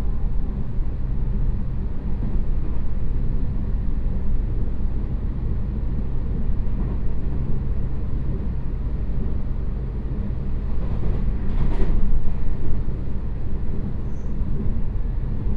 In a railway carriage, from Huatan Station to Dacun Station
Changhua County, Taiwan, 12 May 2016, 14:05